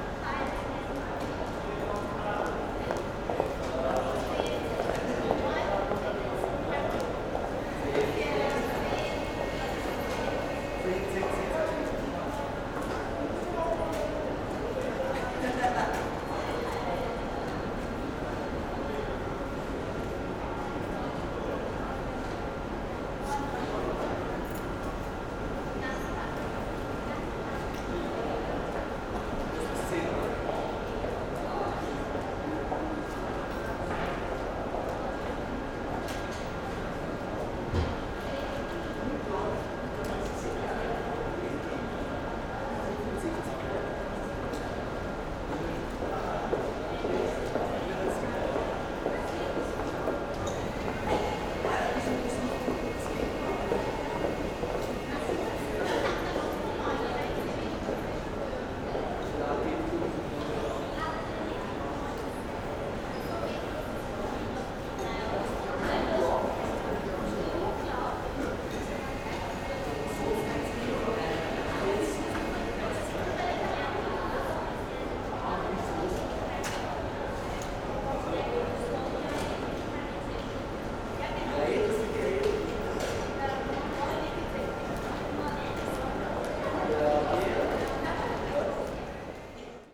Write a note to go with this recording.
ideling at vienna airport, terminal A, waiting for departure, check-in hall ambience.